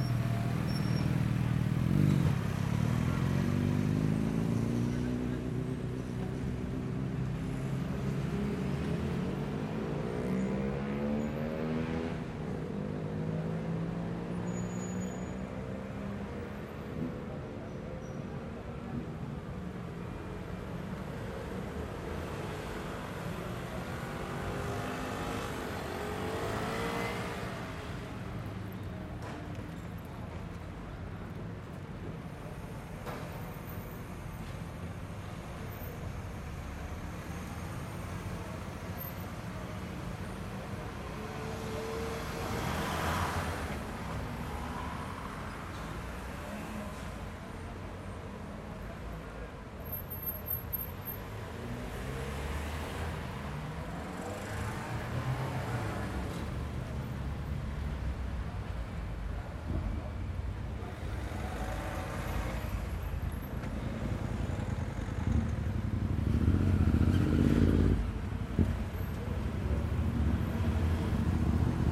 Μιχαήλ Καραολή, Ξάνθη, Ελλάδα - Mpaltatzi Square/ Πλατεία Μπαλτατζή 12:45
Medium traffic, people passing by.
Περιφέρεια Ανατολικής Μακεδονίας και Θράκης, Αποκεντρωμένη Διοίκηση Μακεδονίας - Θράκης, 12 May 2020